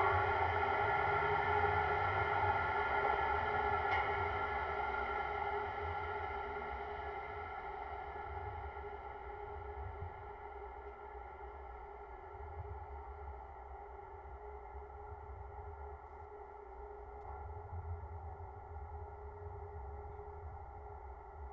viewpoint platform within a small nature preserve, Schöneberger Südgelände park, contact microphones attached to the metal construction, wind and passing by trains
(Sony PCM D50, DIY contact mics)
Berlin, Germany, 16 March 2019